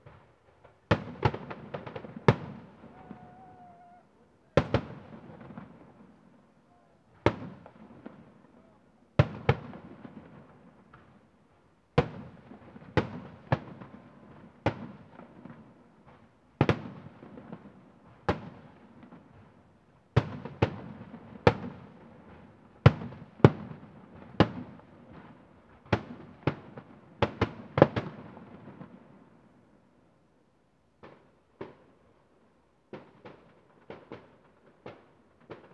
Poznan, balcony - district holiday
fireworks crowning the annual festival of the Piatkowo district. the blast echo off a dozen or so tall apartment buildings made of concrete slabs making a beautiful reverberation over the entire area. lots of room to spared, lush decays.
Poznan, Poland